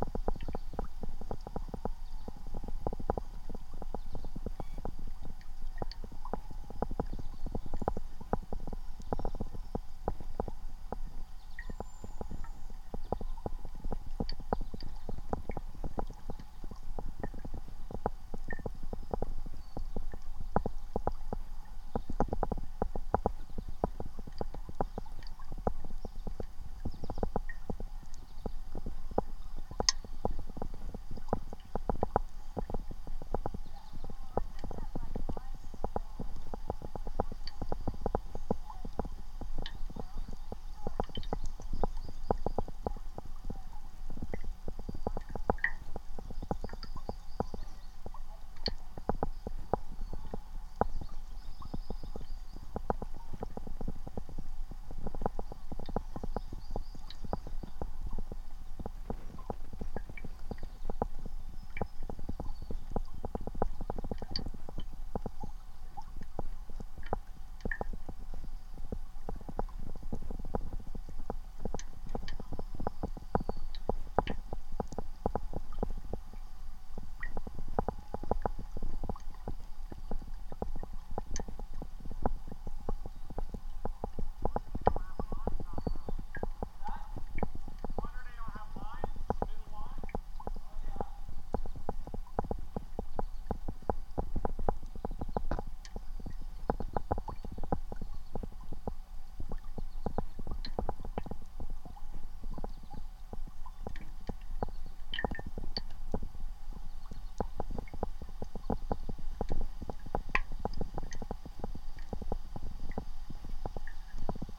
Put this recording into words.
Though it sounds like two distinct mono recordings - which in one sense it is - both channels were recorded at the same time on the same device (Zoom H4n). Sounds were picked up using piezo-element contact microphones on the tops of beverage cans placed into the water. The left channel has a continuous sound from what seems to be a pebble on the creek-bed being rolled about by the current, whereas the right has only occasional sounds of water movement caused by the can. Both channels also pick up ambient sounds from the air such as red-winged blackbirds, dogs, and people.